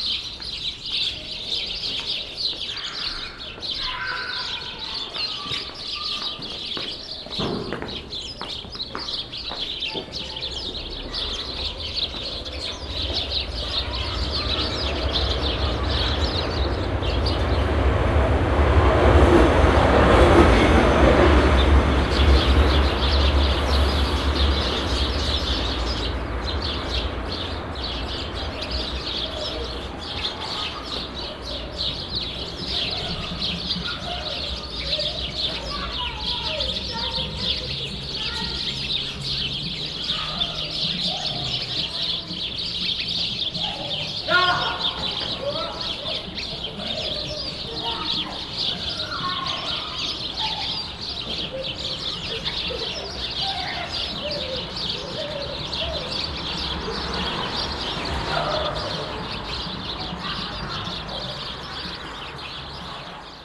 Berlin, Kastanienallee, bird wall - berlin, kastanienallee 86, bird wall
On the street at the entrance of an occupied house. The sound of birds that are nested at the green fassade of the building.
In the background the street traffic noise.
soundmap d - social ambiences and topographic field recordings
Berlin, Germany, 7 February 2012, 15:23